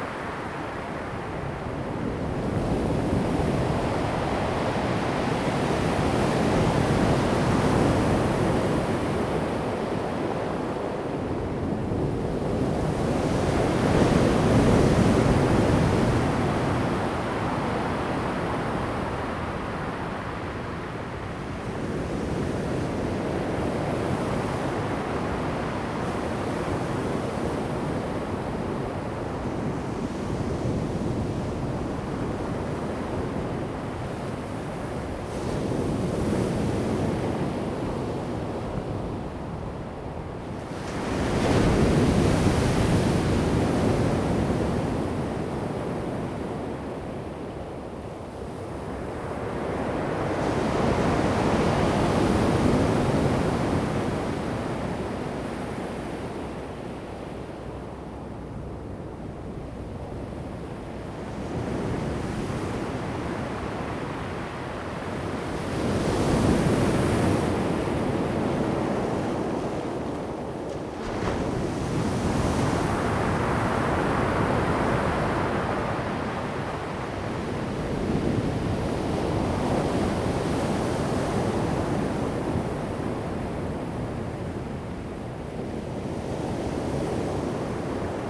金崙海灘, Taitung County, Taiwan - At the beach
At the beach, Sound of the waves
Zoom H2n MS+XY